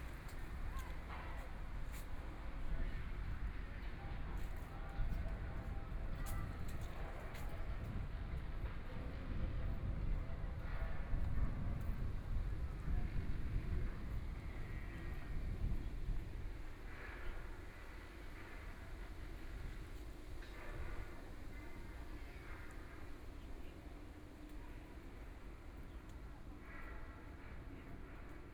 Yangpu District, Shanghai - in the road
walking in the Street, Suburbs, Traffic Sound, Beat sound construction site, Binaural recording, Zoom H6+ Soundman OKM II
25 November 2013, 10:23